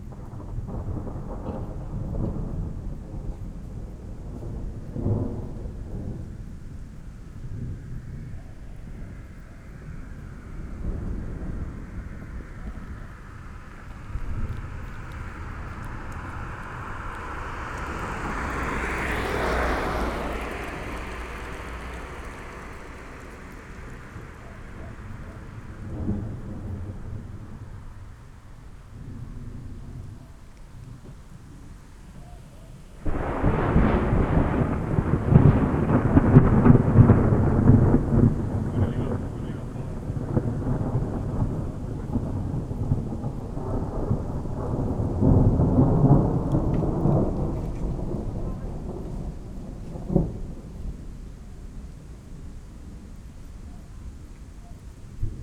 Letschin Bahnhof, main station, thunderstorm arrives, station ambience. This is a small rural station, trains commute between Eberwalde and Frankfurt/Oder every 2 hours.
(Sony PCM D50, DPA4060)